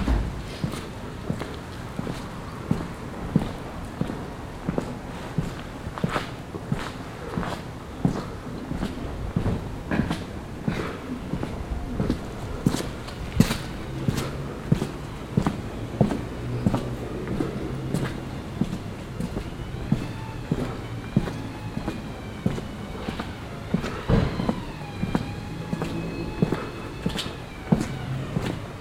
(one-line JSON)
{"title": "velbert, langenberg, hauptstrasse, gang durch altstadt", "description": "gang durch die altstadt von langenberg, mittags, kofsteinpflaster, enge gassen\nstarker an- und abstieg, zu beginn konversation über stadtgeschichte\nsoundmap nrw: social ambiences/ listen to the people - in & outdoor nearfield recordings", "latitude": "51.35", "longitude": "7.12", "altitude": "124", "timezone": "GMT+1"}